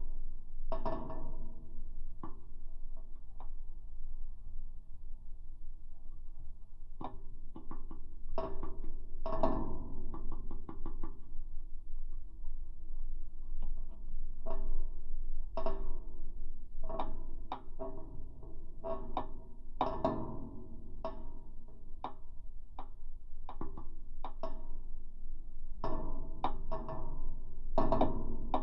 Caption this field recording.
this is some kind of "sign" sound to me. broken lamp pole in the park. when there;s wind and I pass by, I always stop to listen it